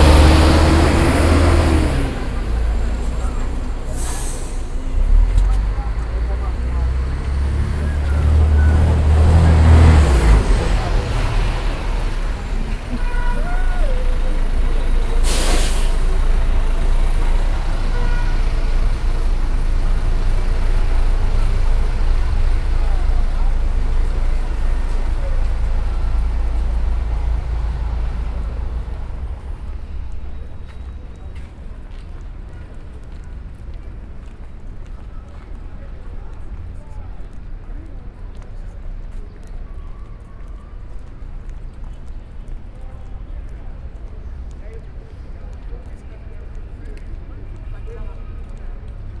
Park Station, Johannesburg, South Africa - walking into Park Station...
coming from Gautrain Station walking over into Park Station...
7 November, 17:20